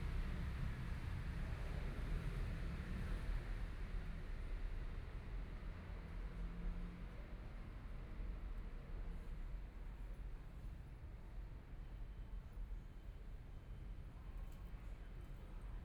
sitting in the Park, The park at night, Community-based park, Dogs barking, Traffic Sound, Binaural recordings, Zoom H4n+ Soundman OKM II